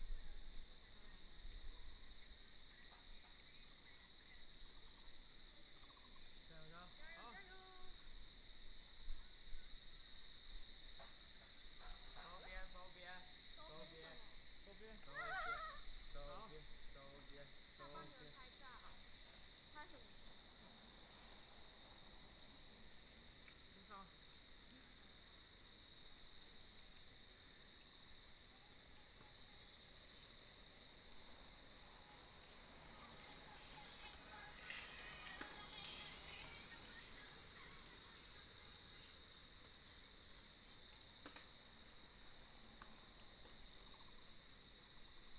199縣道4K, Mudan Township, Pingtung County - Mountain road
Beside the road, The sound of cicadas, Mountain road, Cycling team, Traffic sound
2 April 2018, 10:38